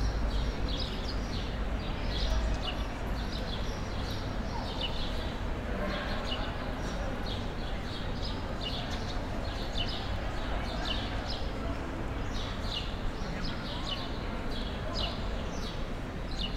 Buen Pastor square
Captation ZOOM H6
Euskadi, España, 26 May 2022, 17:00